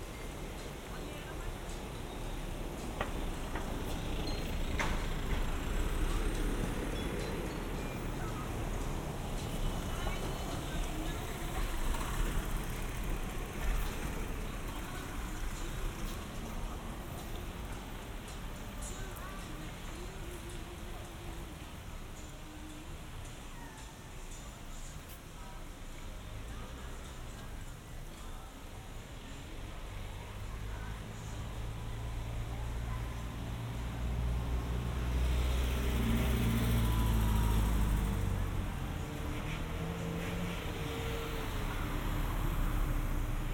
Jūrmala, Latvia, a walk
short walk with ambeo headset on Dzintars Concert Hall street
Vidzeme, Latvija